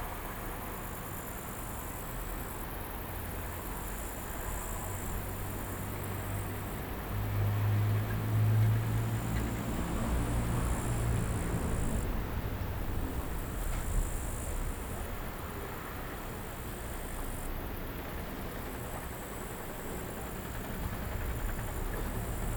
Hochmaisbahn, Hinterthal, Austria - Hochmaisbahn chairlift, top to bottom
Riding the Hochmaisbahn on a hot summer day.
23 July, 15:30